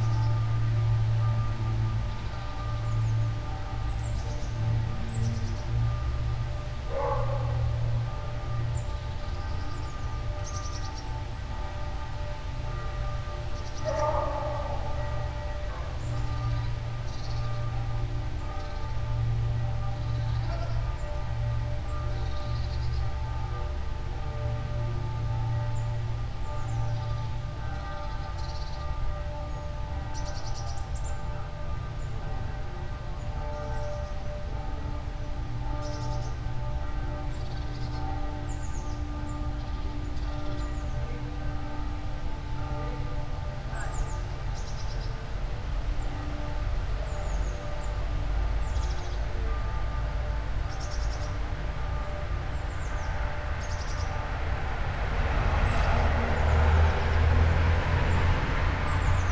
all the mornings of the ... - aug 15 2013 thursday 07:25
Maribor, Slovenia, 15 August, ~07:00